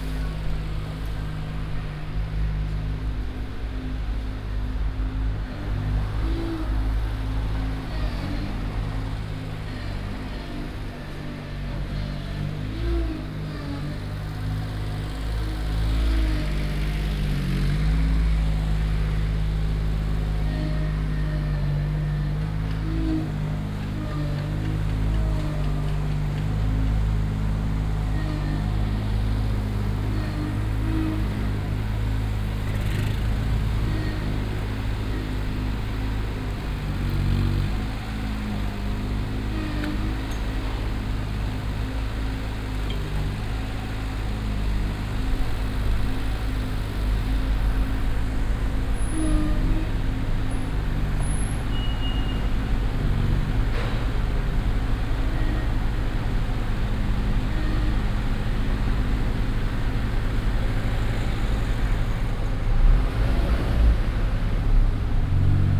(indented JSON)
{
  "title": "cologne, antwerpenerstr, ferngest.gabelstabler",
  "date": "2008-06-26 17:51:00",
  "description": "ferngesteuerter gabelstabler auf strasse abladend, mittags\nsoundmap nrw - social ambiences - sound in public spaces - in & outdoor nearfield recordings",
  "latitude": "50.94",
  "longitude": "6.94",
  "altitude": "58",
  "timezone": "Europe/Berlin"
}